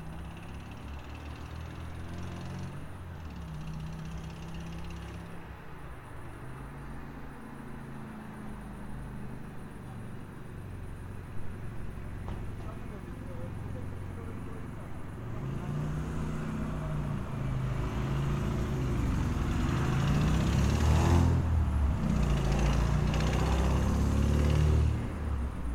Andrei Mureșanu, Cluj-Napoca, Romania - Piata Ion Agarbiceanu
A Saturday evening in the small park in Ion Agarbiceanu Square - traffic, restaurants and dogs barking.